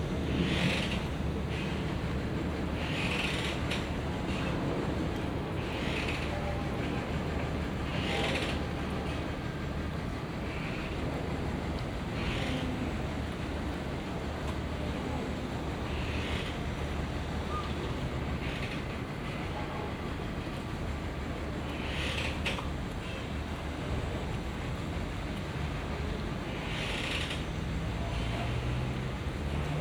Nan'an, Chongqing, Chiny - Chongqing City Orchestra
Chongqing City Orchestra. River Yangtze, barge, planes, construction site and many many sounds.
Binaural - Olympus LS-100
Chongqing Shi, China